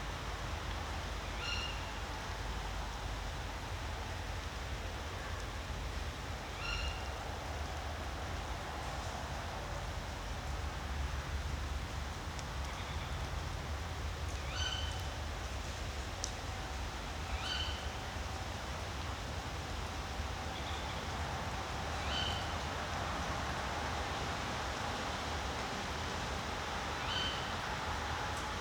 Negast forest, Scheune, Rügen - Owl-couple in the woods
Owl couple meeting in the woods, he lives in a barn, she in the woods, every night they call and find each other
Zoom F4 - diy SASS with 2 PUI5024 omni condenser mics
Vorpommern-Rügen, Mecklenburg-Vorpommern, Deutschland, 22 June